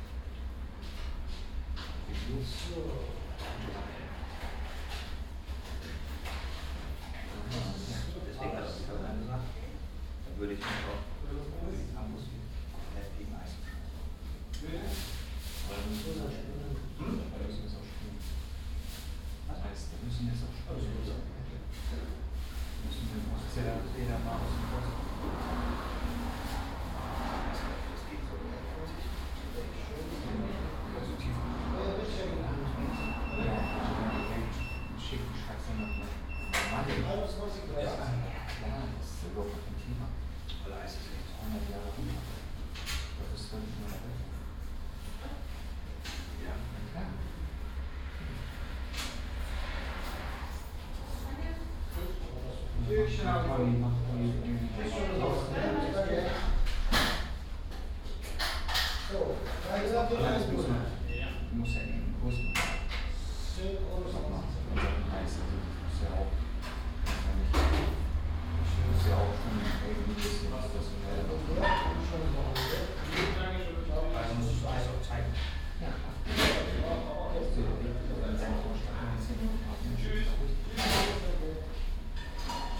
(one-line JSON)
{"title": "Kronshagen, Eckernfoerder Strasse, Snack-bar - Snack-bar, Saturday evening", "date": "2017-07-08 20:30:00", "description": "Snack-bar on a Saturday evening, people coming and going, ordering, cooking, paying, people talking, some traffic from outside.\nBinaural recording, Soundman OKM II Klassik microphone with A3-XLR adapter, Zoom F4 recorder.", "latitude": "54.34", "longitude": "10.10", "altitude": "18", "timezone": "Europe/Berlin"}